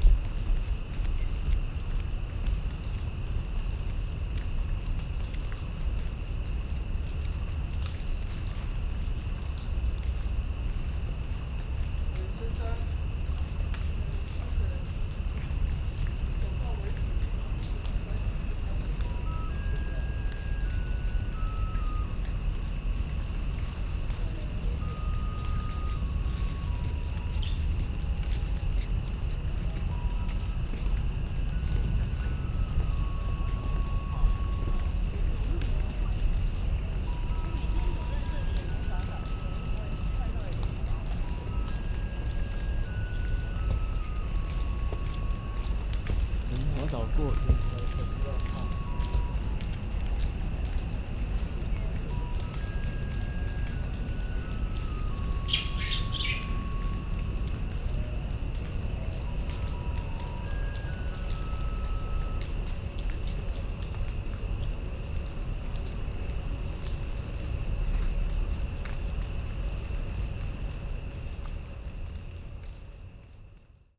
Taipei Botanical Garden, Taiwan - In the Park
in the park, Sony PCM D50 + Soundman OKM II
4 June, ~17:00